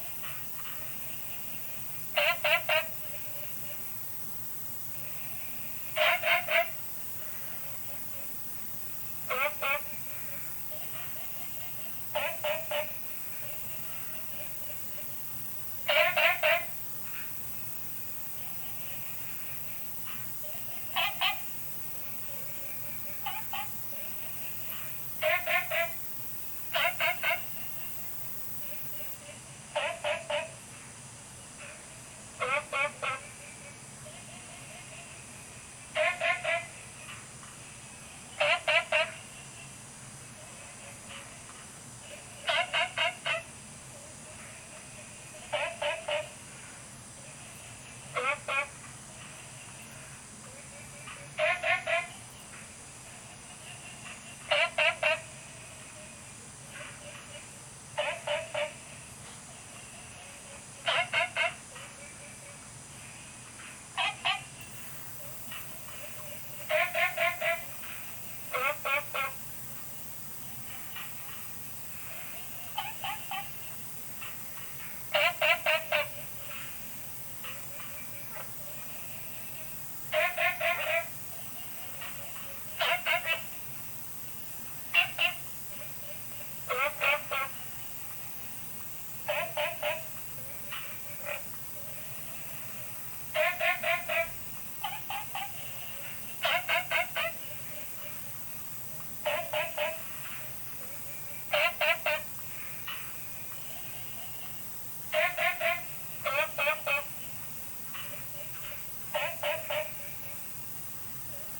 Frogs chirping, Insects sounds
Zoom H2n MS+ XY